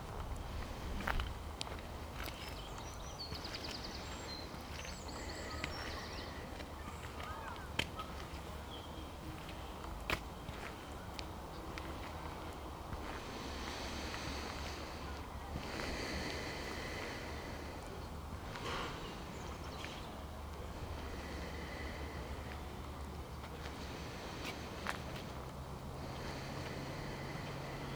Bradford Peverell, Dorset, UK - Walking Meditation
A short walking meditation ending at 'Being Peace' cottage, New Barn Field Centre, near Dorchester whilst on a weekend retreat. Wind noise intentionally recorded to add to the sense of place. Binaural recording using a matched pair of Naiant X-X microphones attached to headphones.
25 April 2015, 14:30